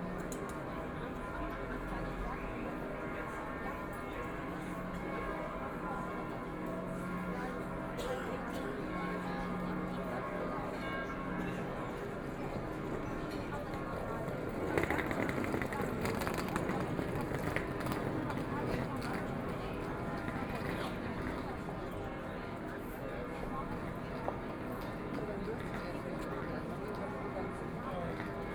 Marienplatz, Munich, German - Church bells
In the Square, Church bells, A lot of tourists